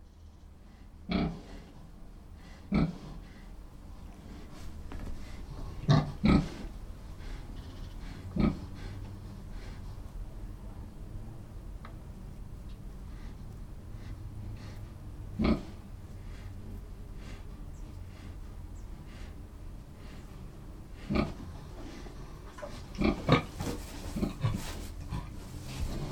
Pigs and bees in Toulcův dvur in Hostivař.